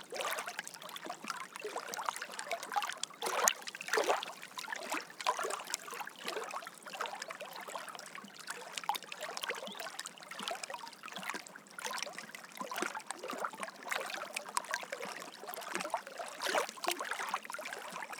Walking Holme Digley Beach
Gentle waves lapping on a sunny spring day.
Kirklees, UK, 19 April 2011